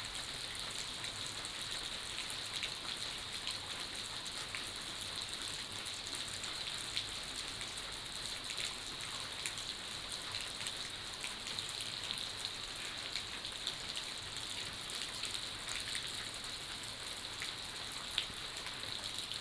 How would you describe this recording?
Rain, Binaural recordings, Sony PCM D100+ Soundman OKM II